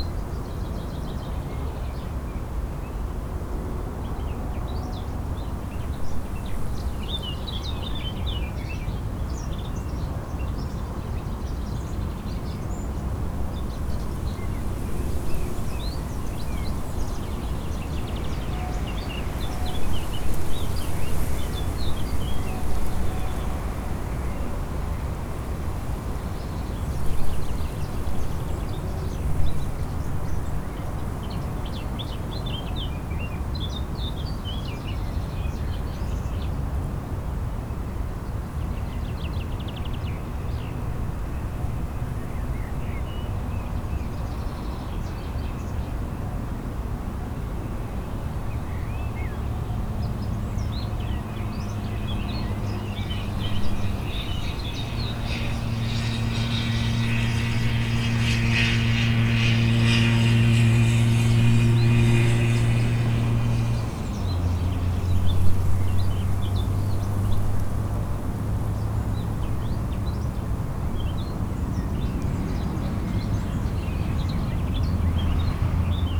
hünger, feldweg: weide - the city, the country & me: pasture, birds
birds, long grass rustling in the wind
the city, the country & me: may 7, 2011
Wermelskirchen, Germany, 7 May 2011, ~14:00